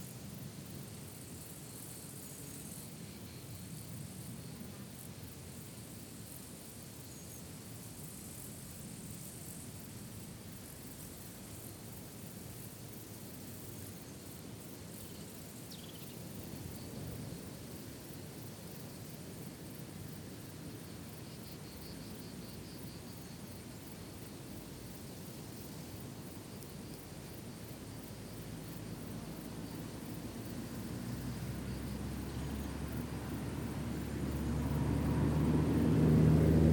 Sigale, Frankreich - Boulevard du Cross, Alpes-Maritimes - Life in a meadow, some cars passing by
Boulevard du Cross, Alpes-Maritimes - Life in a meadow, some cars passing by.
[Hi-MD-recorder Sony MZ-NH900, Beyerdynamic MCE 82]
Sigale, France, 18 August, 12:14